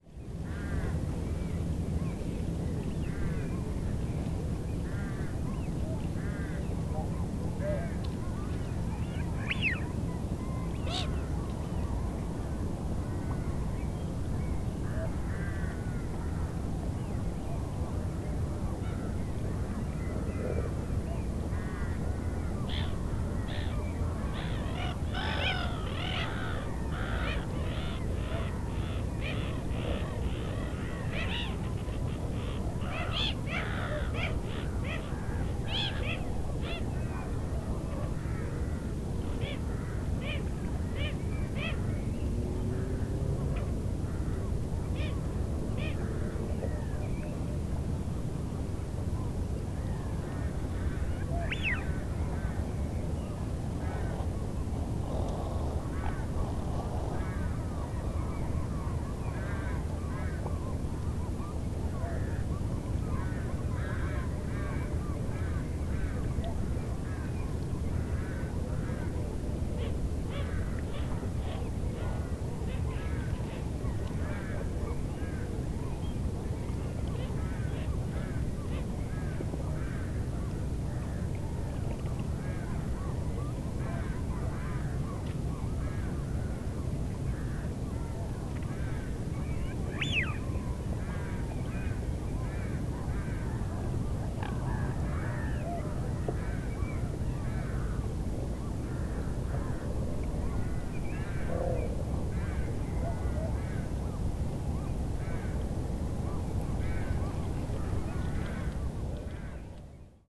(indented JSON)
{
  "title": "Roskilde Fjord, Veddelev, Denmark - Wigeon calls on the fjord",
  "date": "2018-04-02 18:55:00",
  "description": "Wigeon (duck) calls and other birds on the fjord\nCris de canard siffleur ainsi que d’autres oiseaux sur le fjord",
  "latitude": "55.67",
  "longitude": "12.08",
  "altitude": "1",
  "timezone": "GMT+1"
}